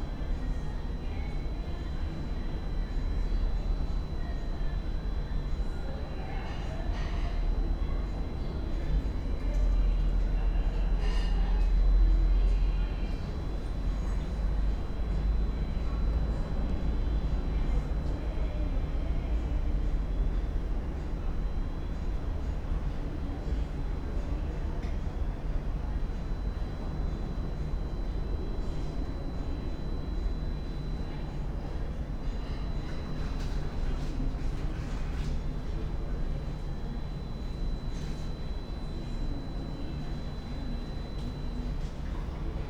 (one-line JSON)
{"title": "R. da Mãe de Água, Lisboa, Portugal - backyard, night ambience", "date": "2017-10-27 00:25:00", "description": "night ambience in a backyard, two distinct electrical tones, unclear source, one high-pitched, the other alternating, both audible the whole night, keeping me awake... (Sony PCM D50, Primo EM172)", "latitude": "38.72", "longitude": "-9.15", "altitude": "51", "timezone": "Europe/Lisbon"}